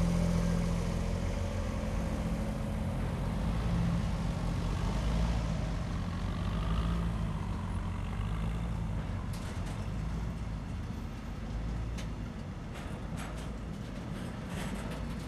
Köln West, freight train
freight train at night, station köln west. these trains can be heard all night in this area.